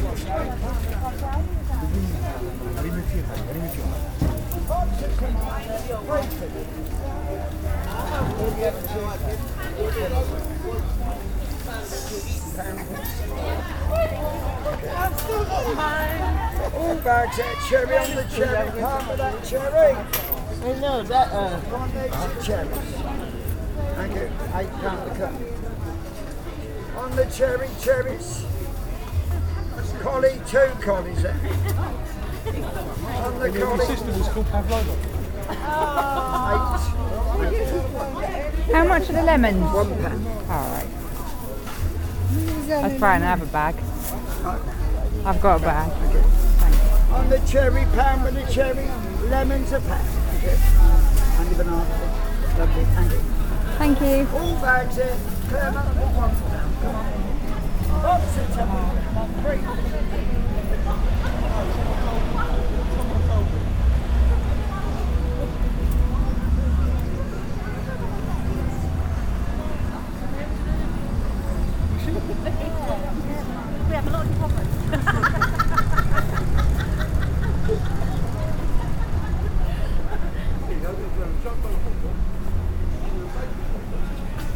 The fruit and veg market, Reading, UK - Buying lemons
Market traders all over the UK have specific ways of vocally promoting their wares to passersby; here is the man selling lemons and cherries one Saturday, in Reading’s Street Market. Recorded with a pair of Naiant X-X omni-directional microphones.
England, UK